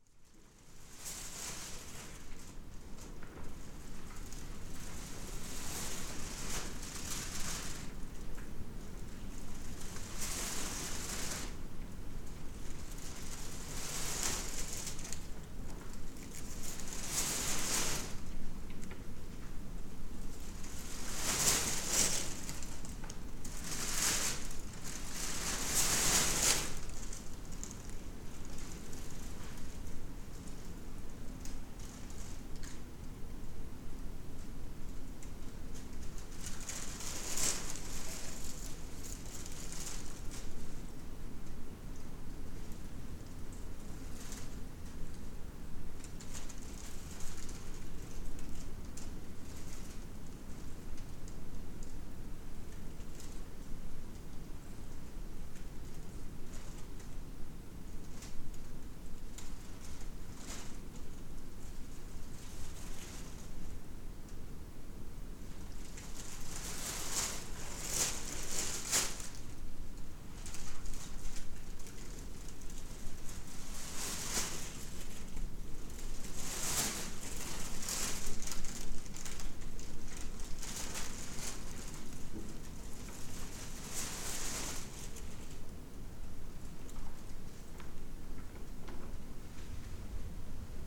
Libertava, Lithuania, in abandoned mansion
abandoned wooden mansion. it's already dangerous to walk inside. roof is half falled down, so the walls. some ambience on the stairs leadng to the second floor...
Utenos apskritis, Lietuva